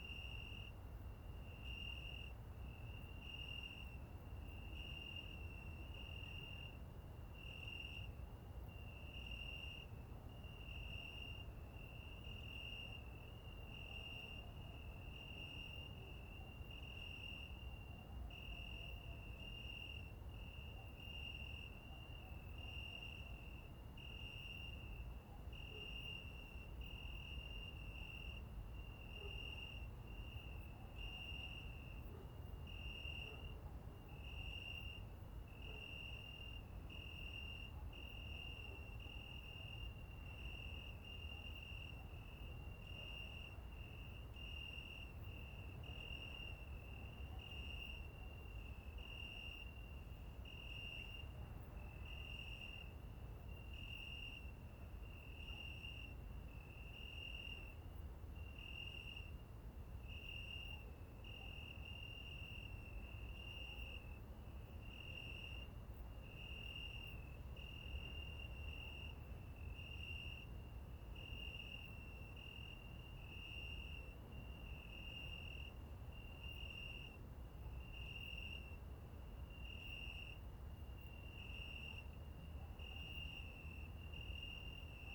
{"title": "Dendraium, Chișinău, Moldova - The Cricket Symphony from the Dendrarium Park", "date": "2018-09-12 21:00:00", "description": "The Cricket Symphony made in September from the Dedrarium Park. Enjoy!", "latitude": "47.03", "longitude": "28.81", "altitude": "56", "timezone": "Europe/Chisinau"}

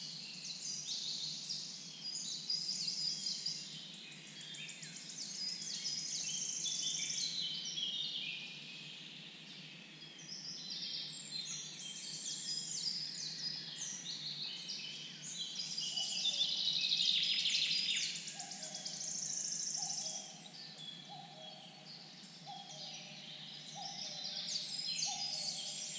{"title": "Zielonka Forest Landscape Park, Poland - Birds in the morning", "date": "2021-05-22 06:45:00", "description": "early morning trip to Zielonka Forest in Greater Poland Voivodship, Poland; these days finding a place unpolluted with man-made sounds becomes a real challenge so the only suitable time of the day is dawn; Birds seem to like it as well ;)\nRecorded with PCM-D100 and Clippy EM270 Stereo Microphones", "latitude": "52.53", "longitude": "17.11", "altitude": "114", "timezone": "Europe/Warsaw"}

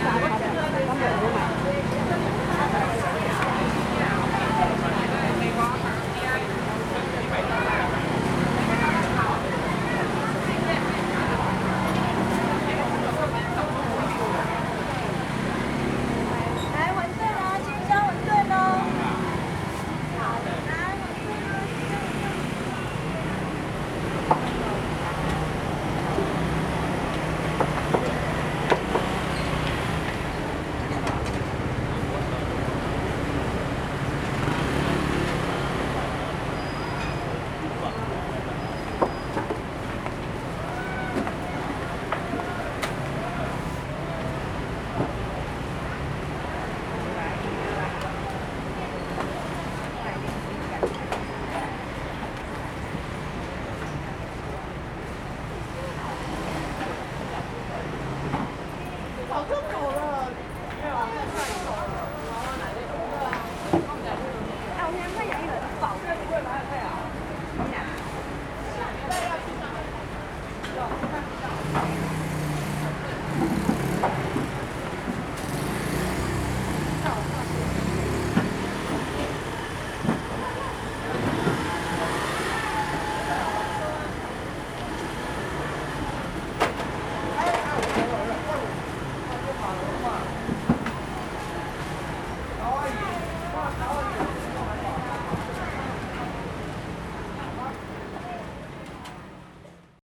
{"title": "Ln., Jinhe Rd., Zhonghe Dist., New Taipei City - Walking in the traditional market", "date": "2012-02-14 16:45:00", "description": "Walking in the traditional market\nSony Hi-MD MZ-RH1+Sony ECM-MS907", "latitude": "25.00", "longitude": "121.49", "altitude": "19", "timezone": "Asia/Taipei"}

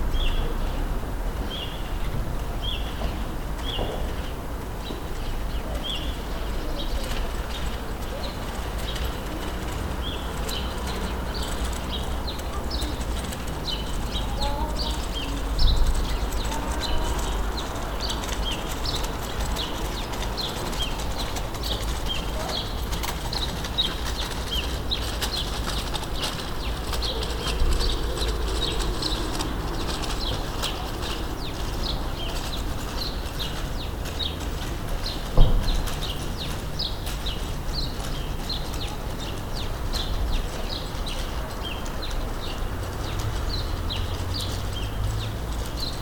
{"title": "Ein Tag an meinem Fenster - 2020-04-02", "date": "2020-04-02 18:11:00", "latitude": "48.61", "longitude": "9.84", "altitude": "467", "timezone": "Europe/Berlin"}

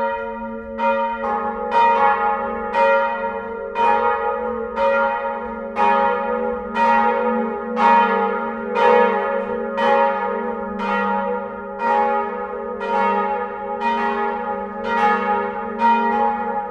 {"title": "essen, old catholic church, bells", "date": "2011-06-08 21:33:00", "description": "The new bells of the old catholic church also known as Friedenskirche recorded directly in the bell tower.\nProjekt - Klangpromenade Essen - topographic field recordings and social ambiences", "latitude": "51.46", "longitude": "7.02", "timezone": "Europe/Berlin"}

